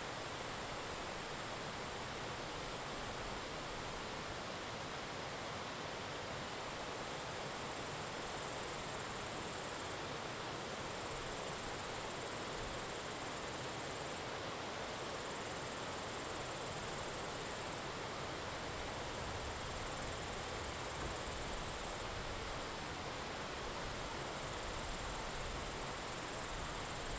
CadTas - INVERSO, 10080 Valchiusa TO, Italia - Notturno
Notturno Estate 2019